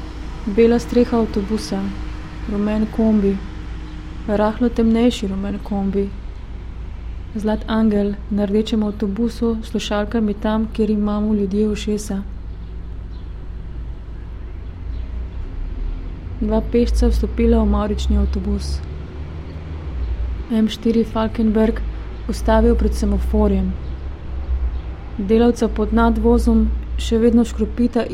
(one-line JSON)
{"title": "writing reading window, Karl Liebknecht Straße, Berlin, Germany - part 17", "date": "2013-05-26 09:12:00", "latitude": "52.52", "longitude": "13.41", "altitude": "47", "timezone": "Europe/Berlin"}